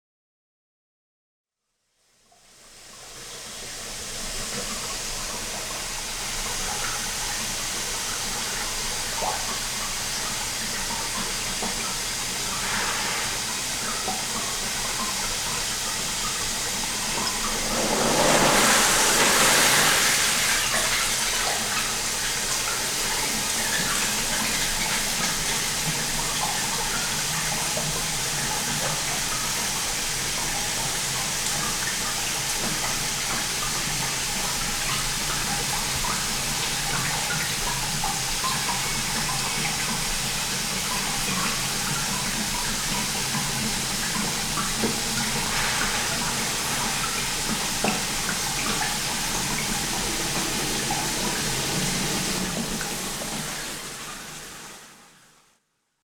Walking Holme Urinals
The constantly flushing urinals. Walking Holme